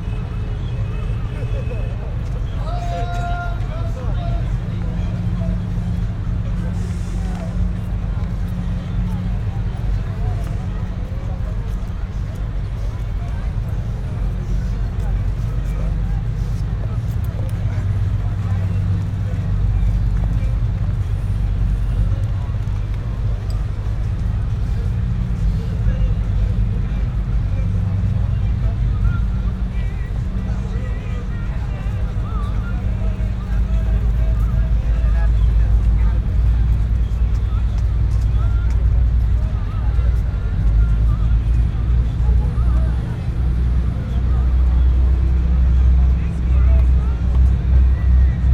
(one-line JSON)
{"title": "tour boat and ferry terminal, Istanbul", "date": "2010-02-26 17:59:00", "description": "Bosporus tour boat and ferry terminal near the Galata Bridge", "latitude": "41.02", "longitude": "28.97", "altitude": "2", "timezone": "Europe/Tallinn"}